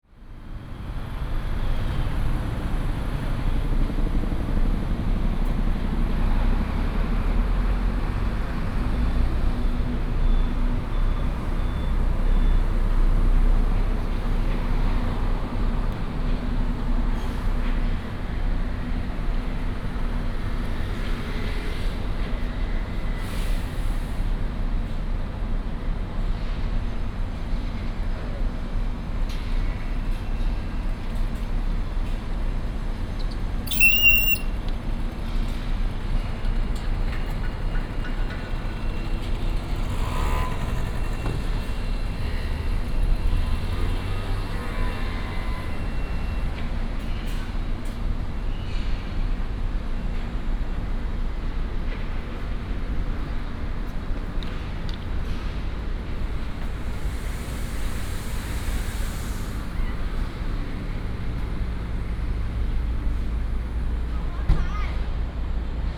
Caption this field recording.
Traffic sound, Outside the bus station, Helicopter sound, Construction sound, The sound of the train station